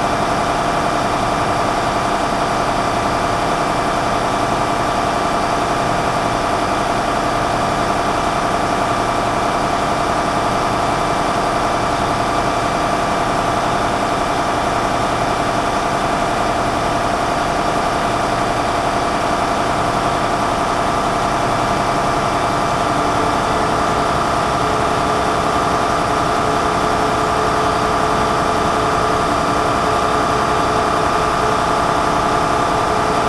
Flughafenstraße, Lemwerder, Germany - Old marine diesel engine
40-year old marine diesel engine, recorded in engine room at different regimes
Vieux moteur diesel marine